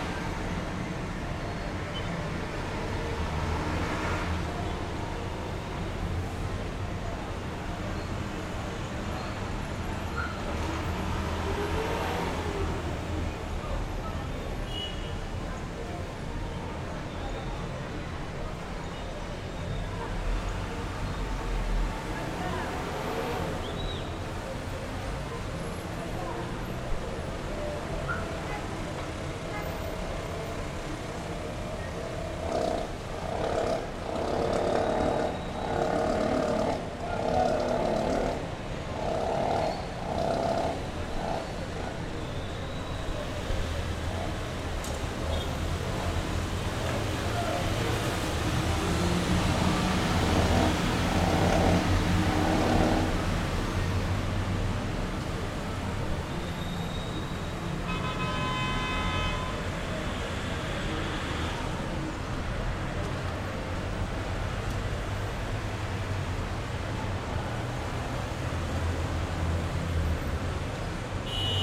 Cra., Medellín, Belén, Medellín, Antioquia, Colombia - La 30 A
Principalmente se escucha el ruido de automóviles, motos y buces. Se escucha el sonido de motores y del viento, pitos de diferentes vehículos. Se alcanza a oír como algunas personas hablan. Se alcanza a escuchar música. Silbido.
Valle de Aburrá, Antioquia, Colombia, 1 September 2022, ~18:00